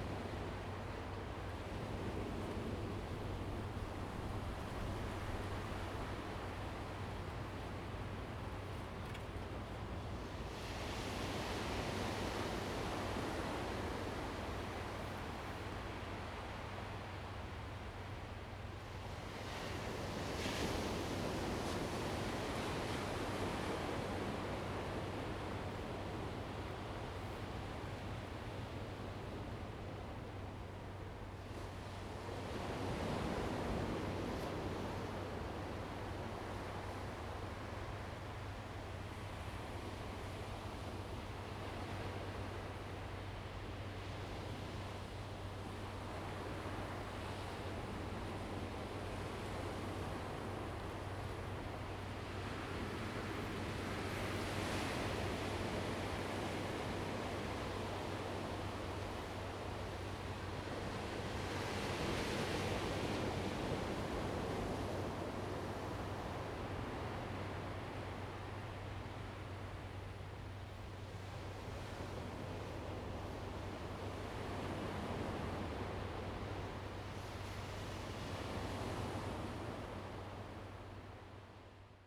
東林海濱公園, Lieyu Township - At Waterfront Park
At Waterfront Park, Sound of the waves
Zoom H2n MS +XY
福建省, Mainland - Taiwan Border, 4 November 2014